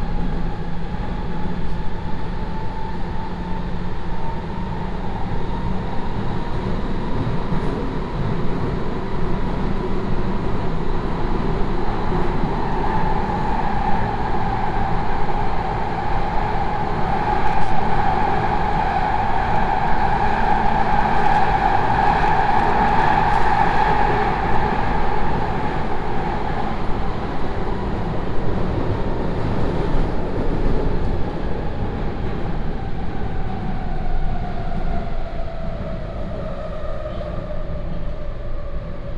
Monastiraki Station Athens, Greece - (535) Metro ride from Monastiraki to Ethniki Amyna
Binaural recording of a metro ride with line M3 from Monastiraki to Ethniki Amyna. It is pretty long with very regular periods between the stations.
Recorded with Soundmann OKM + Sony D100
10 March 2019, 16:35